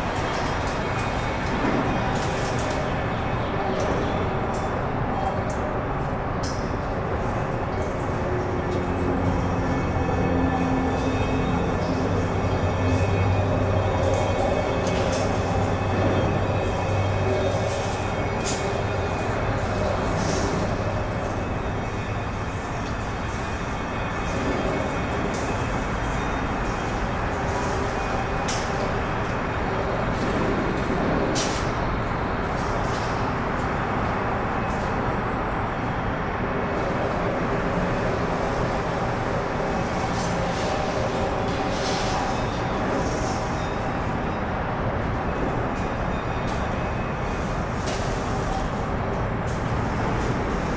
down in the tunnels under the highway, Austin TX
working with the acoustic space of some drainage tunnels under the highway interchange near downtown Austin
TX, USA, 19 March 2010